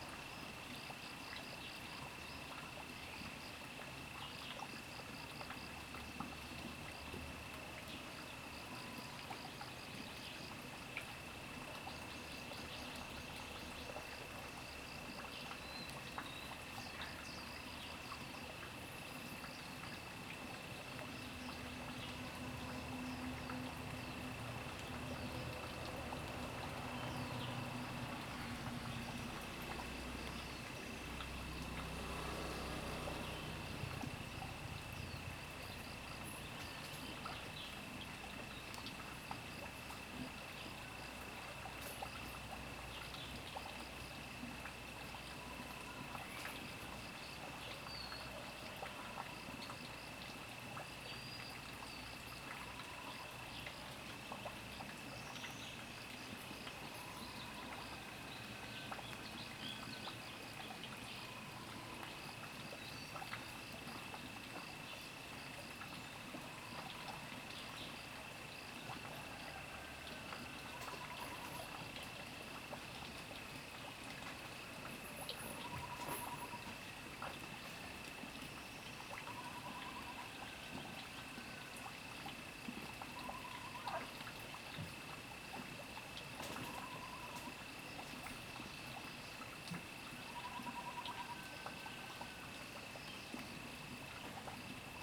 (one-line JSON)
{"title": "TaoMi Li., 青蛙阿婆的家 Puli Township - In the morning", "date": "2015-04-30 05:42:00", "description": "Bird calls, Crowing sounds, The sound of water streams, Sound of insects\nZoom H2n MS+XY", "latitude": "23.94", "longitude": "120.94", "altitude": "470", "timezone": "Asia/Taipei"}